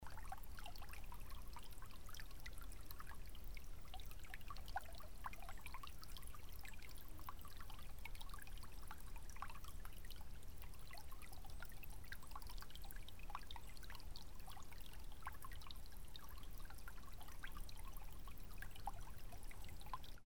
water stream, Dletovo forest, Klana region.
Croatia, 26 August, 19:30